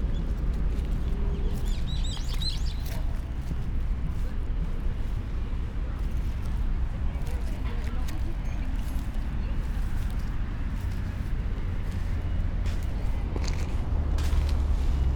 Kamogawa river, Kyoto - walk, sand, stones, dry grass ...
Kyōto-shi, Kyōto-fu, Japan